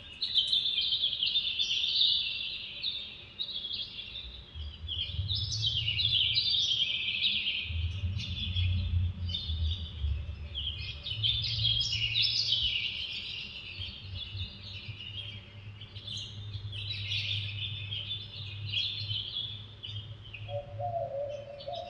Convent of Santa Catalina de Siena, Oaxaca, Oax., Mexico - Dawn Birds After a Wedding
Recorded with a pair of DPA4060s and a Marantz PMD660